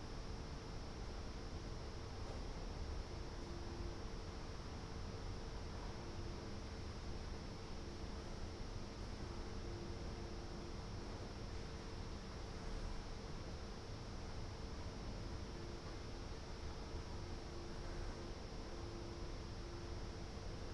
"Round midnight first day of students college closing in the time of COVID19" Soundscape
Chapter CXXII of Ascolto il tuo cuore, città. I listen to your heart, city
Sunday, August 2nd 2020, four months and twenty-two days after the first soundwalk (March 10th) during the night of closure by the law of all the public places due to the epidemic of COVID19.
Start at 00:55 a.m. end at 01:29 a.m. duration of recording 33’47”
The students college (Collegio Universitario Renato Einaudi) closed the day before for summer vacation.
About 30 minutes of this recording are recorded on video too (file name )
Go to previous similar situation, Chapter CXXI, last day of college opening